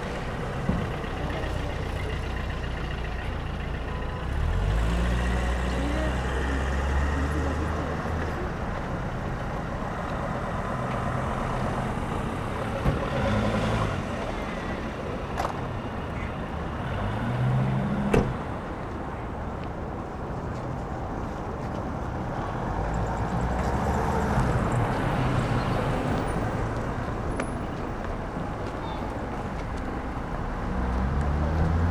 Berlin: Vermessungspunkt Maybachufer / Bürknerstraße - Klangvermessung Kreuzkölln ::: 06.12.2011 ::: 16:00
Berlin, Germany, 6 December 2011, 16:00